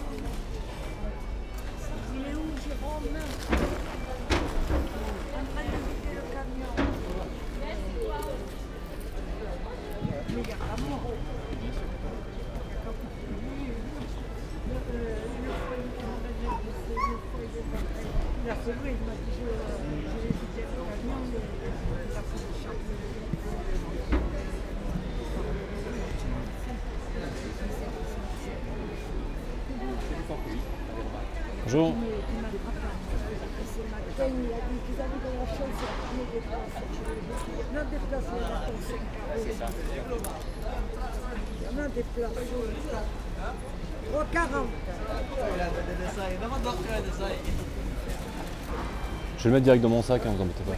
Fresh fruits and vegetables stands, cheese, nuts, etc.
Recorded wit two homemade tiny microphones (Primo EM258 omni electret capsule), clipped on the hood of my coat, plugged into a Zoom H5 in my inner pocket.